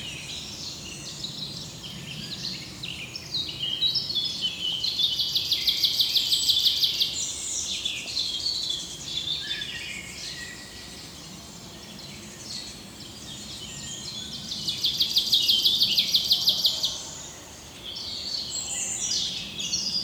Thuin, Belgique - Birds in the forest
Common Chaffinch solo, European Robin, Eurasian Blackcap, a solitary Sparrow.
3 June 2018, ~11:00